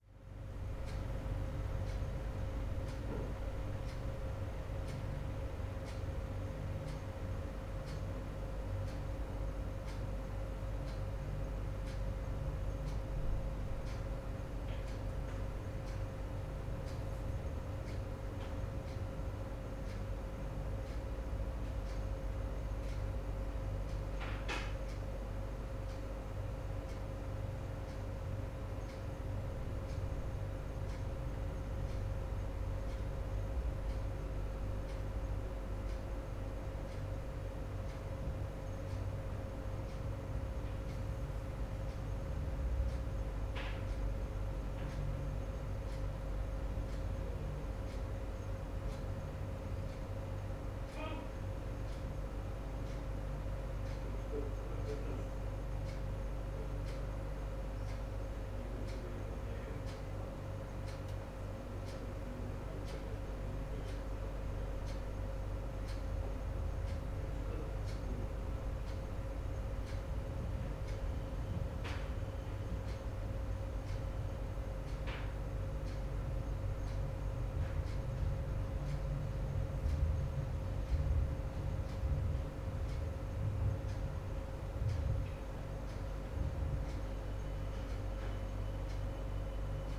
ambience in the help desk room half an hour before working hours. no one has arrived yet. a sound blend of a few working computers, water cooler thermostat, air conditioning, idling printer, street traffic, wall clock and sparse sounds coming form another room.
18 July 2013, ~08:00